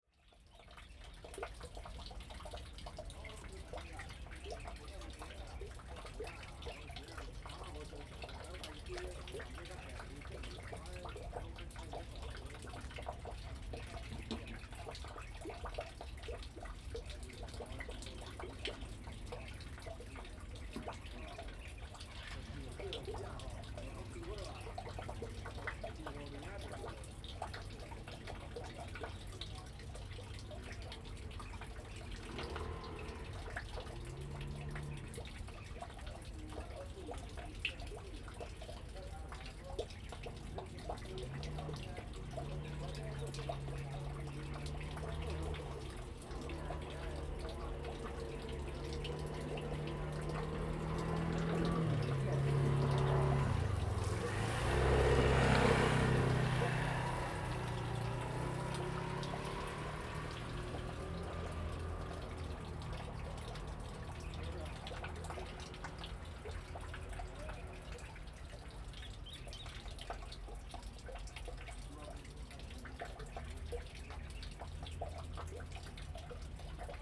杉福漁港, Liuqiu Township - In the fishing port pier
In the fishing port pier, Traffic Sound, Birds singing
Zoom H2n MS+XY
Liuqiu Township, Pingtung County, Taiwan, 2 November